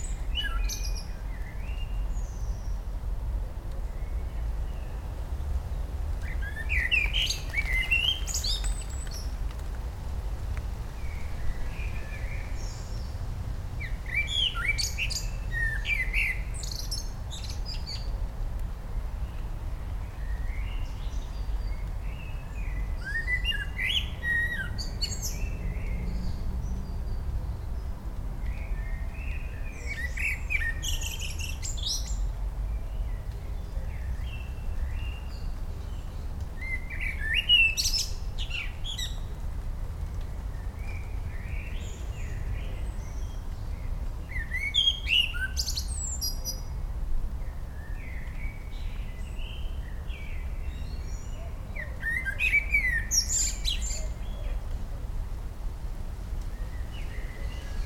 Ixelles, Belgium - Blackbird and rain
Tucked away behind some quite busy streets in Brussels is this beautiful park. You can still hear the sounds of sirens drifting in over the walls, and the bassy, low frequencies of nearby and dense traffic... but the trees really provide a nice buffer from the noise of the city, and create lovely cavernous spaces which the Blackbird uses to amplify its wonderful song. This time mixed with rain, too. EDIROL R-09 recorder was used, with a map held above it as improvised recorder umbrella.